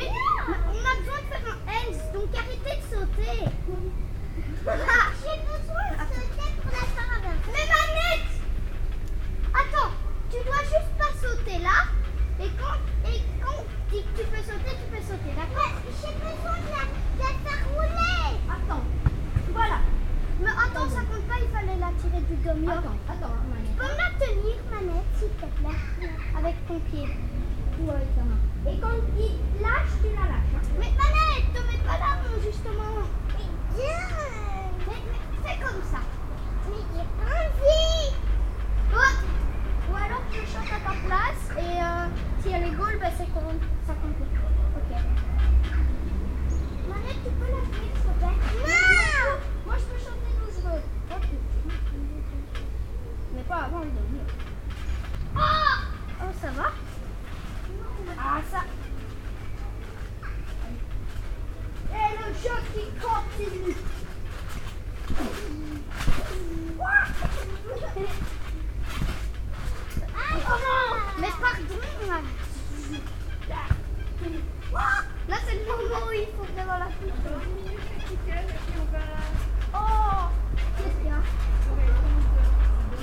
Mont-Saint-Guibert, Belgique - Children playing
Children are playing with a trampoline in the garden. It's a saturday evening and all is quiet.
Mont-Saint-Guibert, Belgium, 21 May 2016